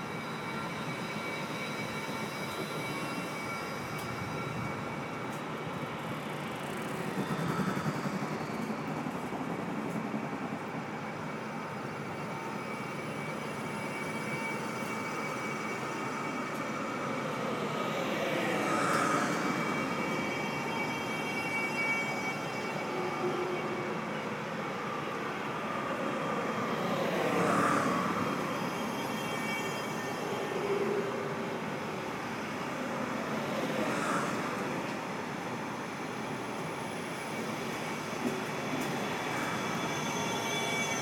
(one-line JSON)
{"title": "Oostende, Belgique - Oostende station", "date": "2018-11-16 09:32:00", "description": "Very heavy construction works in the Oostende station, cold and foggy weather. On the platform 5 a train is leaving the station to Eupen.", "latitude": "51.23", "longitude": "2.93", "altitude": "7", "timezone": "Europe/Brussels"}